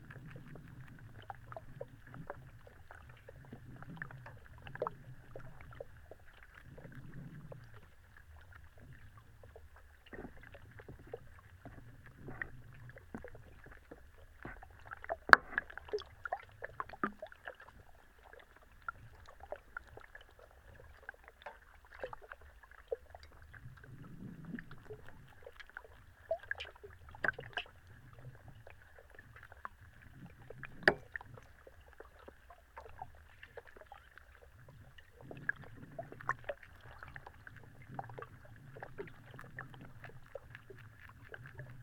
Underwater listening in some kind of basin near promenade
Kurzeme, Latvija